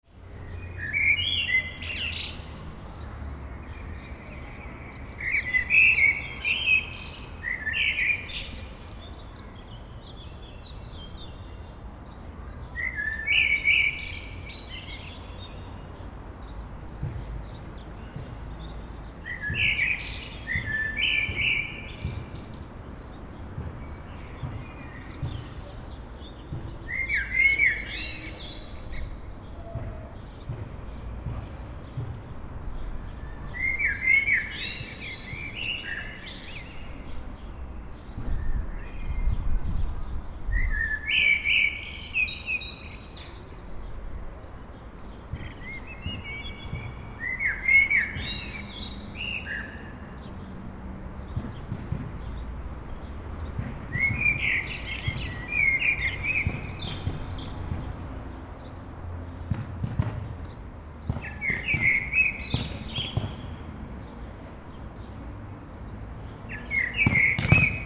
Gradnikova, Nova Gorica, Slovenia - Birds and ball
The suund was recorded in an afternoon in the city, birds are mixed with a bouncing ball and some chatting.